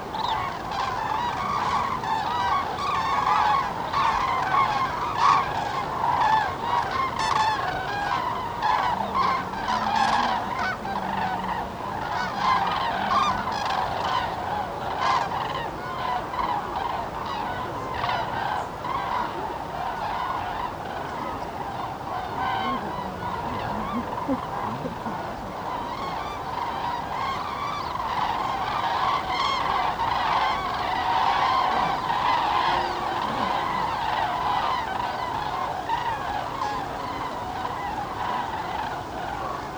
Linum, Fehrbellin, Germany - Migrating Cranes in Flight1
During their autumn migration northern Europe's cranes gather in hundreds of thousands at Linum to feed up before continuing their journey southwards. They are an amazing sight. Puctually, at dusk, flocks of up to 50 birds pass overhead in ever evolving formations trumpeting as they go. Equally punctual, herds of human birdwatchers turn up to see them, chatting to each other and murmuring on their phones. The Berlin/Hamburg motorway is a kilometer away and Tegel airport nearby. The weather on this evening was rainy and yellowing poplar leaves were hissing in the wind. These are the sound sources for this recording.
7 October 2014